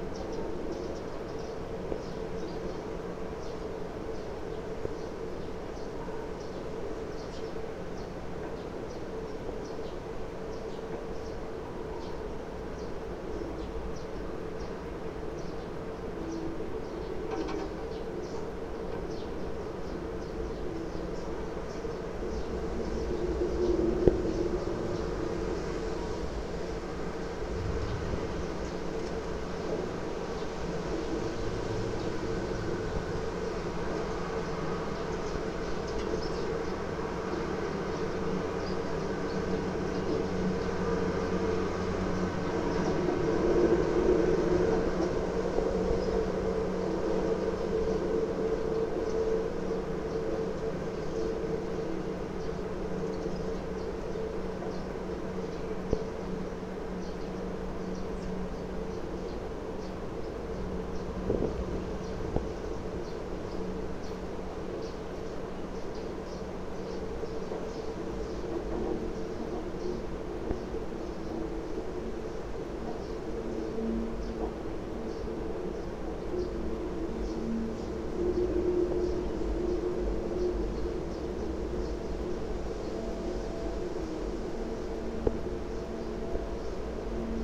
Njegoševa ulica, Maribor, Slovenia - telephone pole box
resonance inside a cable box mounted on the side of a telephone pole, captured with contact microphones